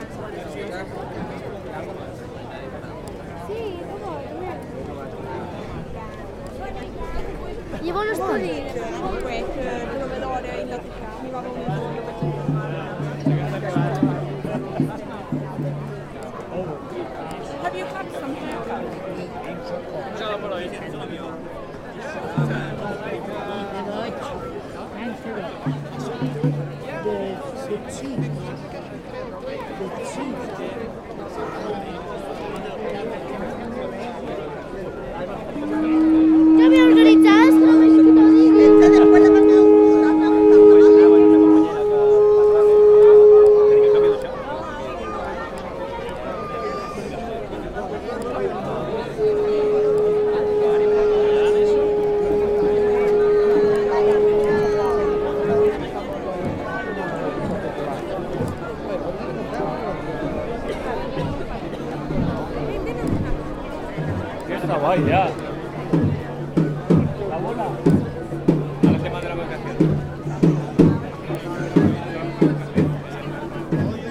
market stalls, visitors from many countries, musicians at the entrance of the castle, food stand with barbecue and drinks under palm trees, various stations with old wooden children's games, an old small children's carousel pushed by hand with a bell. // soundwalk über ein mittelalterliches Fest, Besucher aus vielen Ländern, Marktstände, Musiker im Eingang der Burg, Essenstand mit Gegrilltem und Getränken unter Palmen, verschiedene Stationen mit alten Kinderspielen aus Holz, ein altes kleines Kinderkarusell von Hand angeschoben mit einer Glocke.